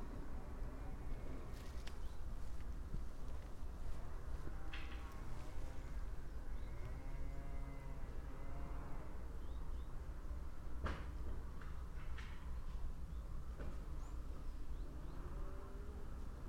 Grožnjan, Croatia - at the edge of the small city
before sundown, winter time, distant trunks sawing, water drippings